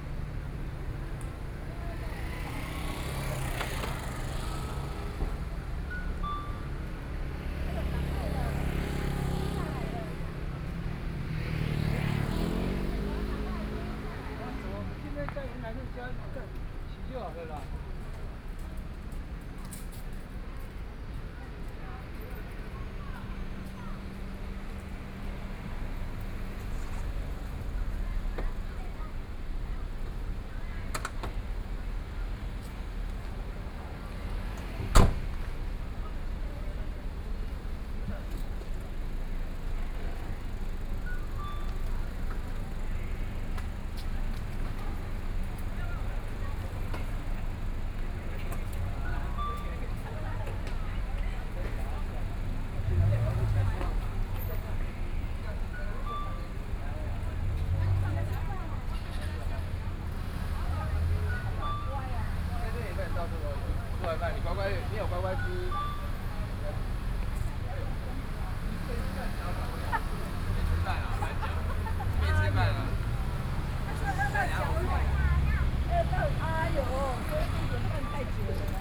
Guangming Rd., Beitou - in the roadside
Standing on the roadside, In front of a convenience store, Binaural recordings, People coming and going, Sony PCM D50 + Soundman OKM II
Beitou District, Taipei City, Taiwan